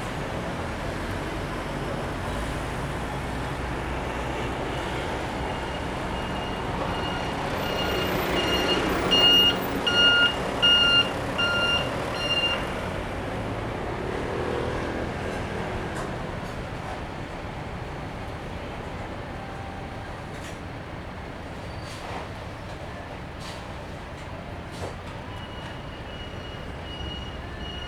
Ciaotou, Kaohsiung - Corner
Traffic Noise, Sony ECM-MS907, Sony Hi-MD MZ-RH1
橋頭區 (Ciaotou), 高雄市 (Kaohsiung City), 中華民國, 29 March 2012